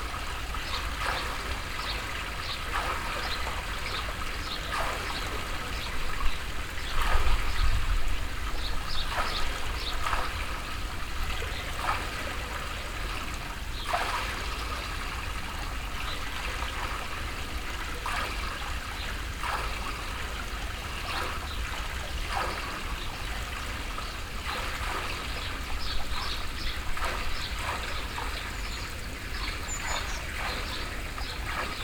Povoa Das Leiras, Portugal, well - PovoaDasLeirasWell
walk through the village with binaural microphones, from time to time manipulating objects. recorded together with Ginte Zulyte. Elke wearing in ear microphones, Ginte listening through headphones.